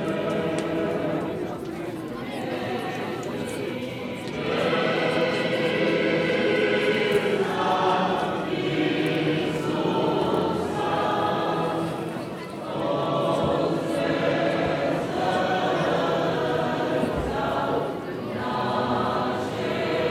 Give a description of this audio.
voices in front of the church, singing inside